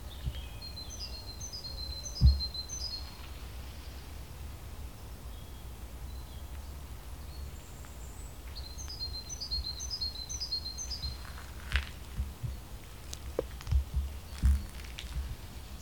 Acres Down, Minstead, UK - 049 Birds, cyclist